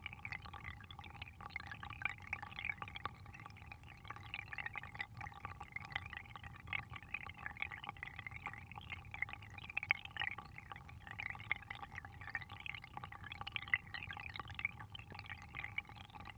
Houtrustweg, Den Haag - hydrophone rec of a little stream next to a drain
Mic/Recorder: Aquarian H2A / Fostex FR-2LE
The Hague, The Netherlands, 1 May 2009, 14:30